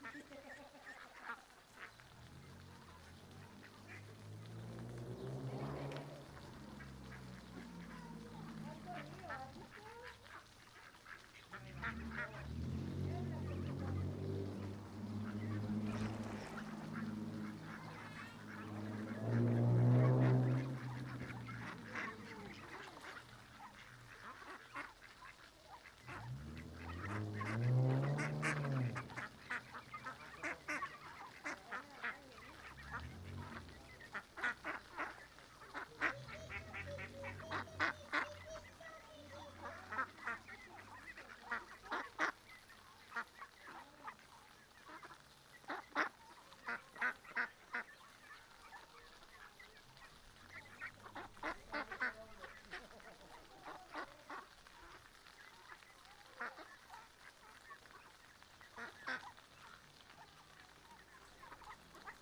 Domingo tarde en un parque muy chulo de Griñón donde hay un pequeño río donde viven patos. Los sonidos de aves que se escuchan son Ánade Real (Anas platyrhynchos). Hay de todas la edades, adultos, medianos y también patitos pequeños con sus mamás. Suele pasar mucha gente por la zona a darles de comer y suelen ponerse nerviosos cuando eso ocurre, deseando coger un trocito de comida. Cerca de nosotros había unos patitos adolescentes pidiéndonos comida. También se puede escuchar el sonido de los pequeños escalones en el río que hacen pequeñas cascadas, y una fuente grande con una tinaja de donde sale agua en cascada. La gente pasar... los niños emocionados con los patitos...
Comunidad de Madrid, España, 28 June 2020